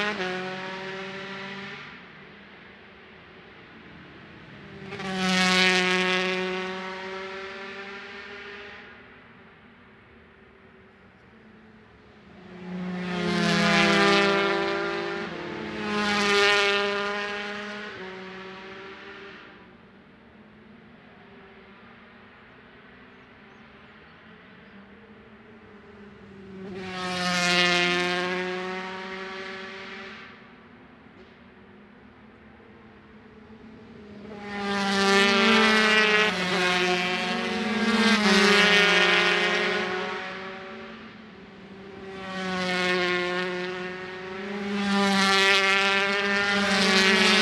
Brands Hatch GP Circuit, West Kingsdown, Longfield, UK - british superbikes 2007 ... 125 practice ...
british superbikes ... 125 practice ... one point stereo mic to minidisk ... time approx ...
April 2007